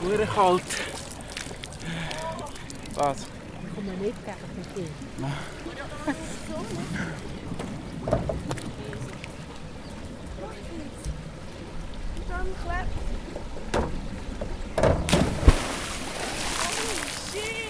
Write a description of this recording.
Aareschwimmbad Marzili, Turmspringen, Jugendliche mit Drive bei der Sache, Mutproben